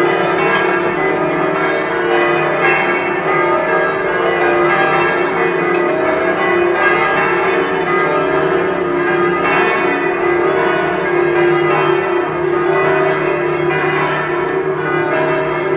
Poschiavo, Schweiz - Festtagsglocken mal zwei
Festtagsglocken mal zwei die sich konkurrenzieren auf der Piazza zwischen der Feier und dem Beginn des Nationalfeiertages
Switzerland, 1 August, 18:00